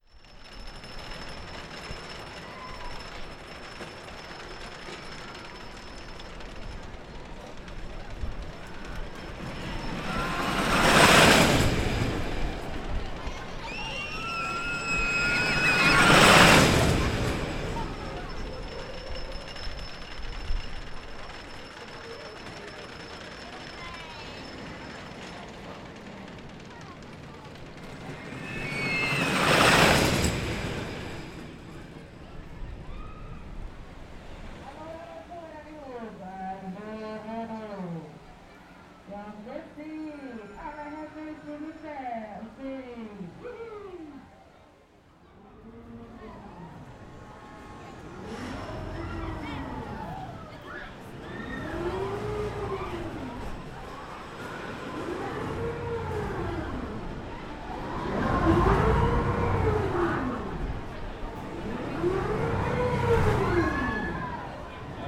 Djurgården, Östermalm, Stockholm, Suecia - Gröna Lund
Parc d'atraccions.
Theme Park.
Parque de atracciones.
Stockholm, Sweden, 9 August 2016, 16:13